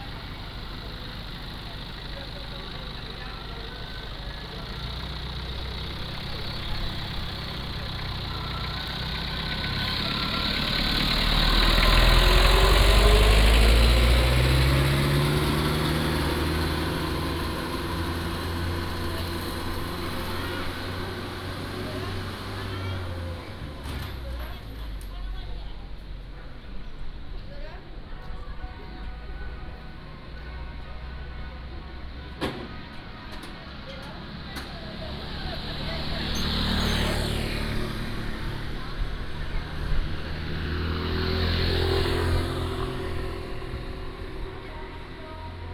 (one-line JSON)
{"title": "坂里村, Beigan Township - Small village", "date": "2014-10-13 15:35:00", "description": "Small village, Small square in the village of roadside", "latitude": "26.22", "longitude": "119.97", "altitude": "17", "timezone": "Asia/Taipei"}